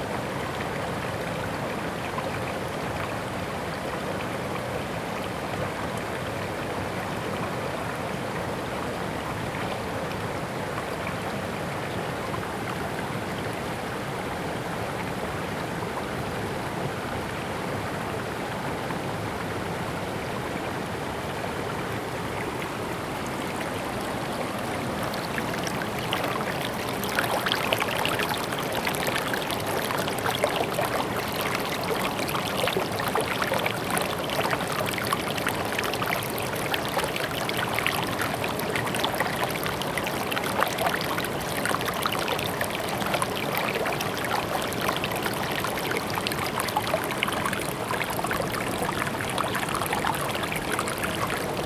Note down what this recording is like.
streams, brook, Rock sound in the water between regions, Zoom H2n MS+XY